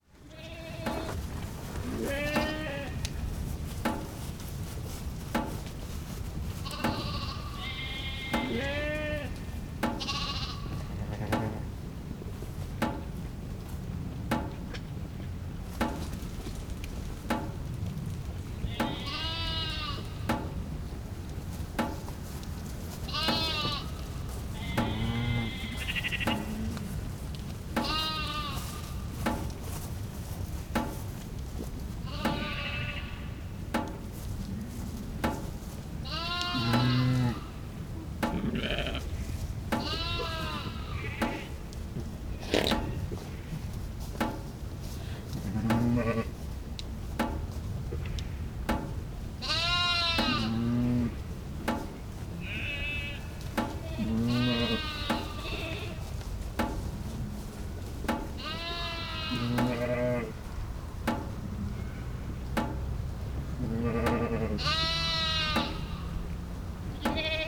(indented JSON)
{
  "title": "Beselich Niedertiefenbach - meadow at night, sheep, electric fence",
  "date": "2012-07-01 23:25:00",
  "description": "meadow with sheep, quiet summer night, sound of a electric fence generator. huge impact of a single car driving by",
  "latitude": "50.45",
  "longitude": "8.14",
  "altitude": "230",
  "timezone": "Europe/Berlin"
}